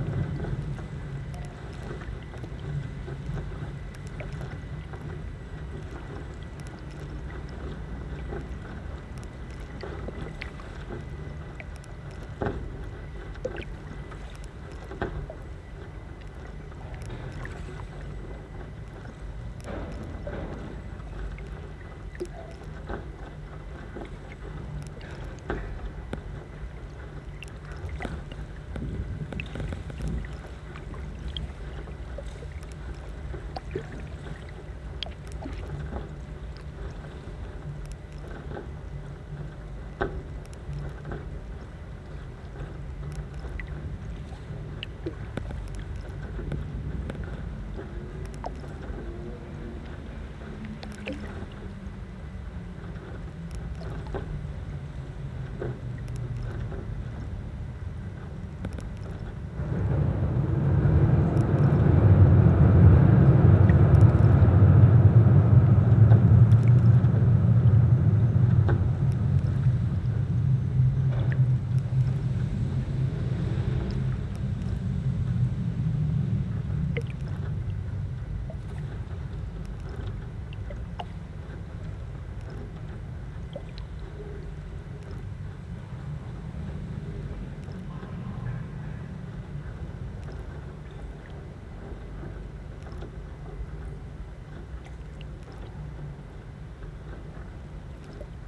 Eferdinger Str., Linz, Austria - 3 sound layers under the bridge - thumping trams, speedboats underwater, creaking moorings
The sound under the Nieblungen bridge can be spectacular. Trams thump and roar as their weight makes the whole structure reverberate and cars rock over the joints in particular spots. But it's not only what can be heard with human ears. Contact mics pick up the creaks and strains in metal mooring ropes that hold landing stages and large riverboats to the shore. And hydrophones can listen into the sound of tourist speedboats from underwater as they zip past. This latter is a loud, persistent (it never completely disappears), cutting whine, an intensely irritating drilling in your ear - real underwater sonic pollution. It can't be much fun being a fish in this part of the river. The recording mixes all these layers together. They were recorded simultaneously in sync.
11 September 2020, ~13:00